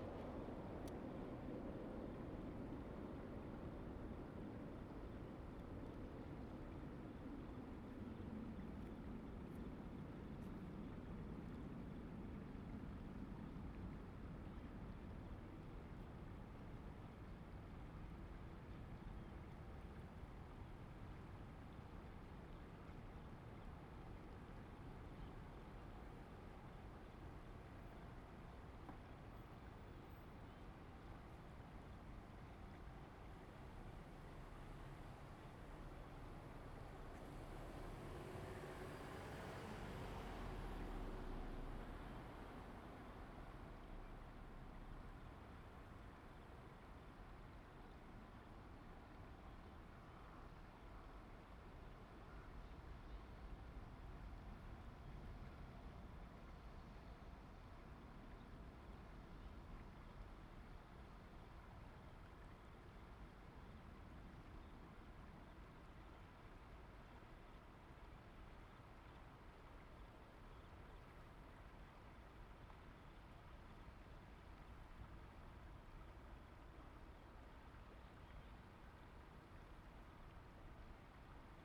平安路, Dayuan Dist., Taoyuan City - Under the airway
Under the airway, The plane landed, The plane was flying through, Zoom H2n MS+XY
Taoyuan City, Taiwan, 18 August, 15:32